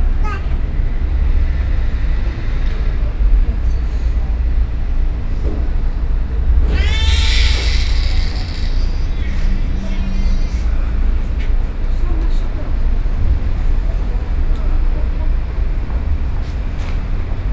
Brussels, Hospital Paul Brien - baby crying.
Schaerbeek, Belgium, 13 May 2011